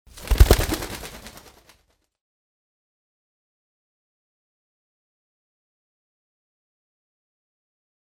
At the inner yard of the Dom Church in the morning time. A pigeon flying away.
Projekt - Klangpromenade Essen - topographic field recordings and social ambiences
Essen, Germany, 11 June 2011, 18:43